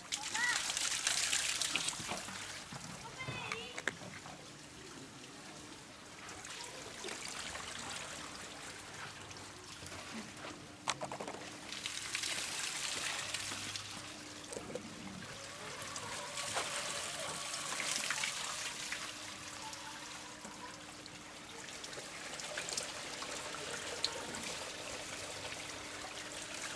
R. Emídio Navarro, Luso, Portugal - A family collects natural water from a spring in Luso
A family collects natural water from a spring of superficial origin that runs through the Fountain of St. John in Luso, Portugal.
They fill several plastic bottles with Luso's water to consume at home.